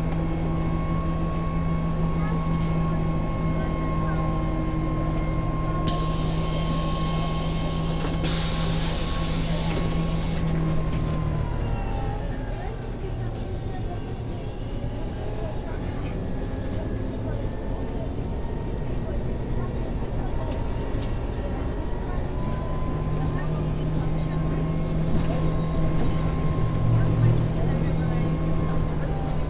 l'viv, trolleybus ride - line 10 from sykhiv to the university (part II)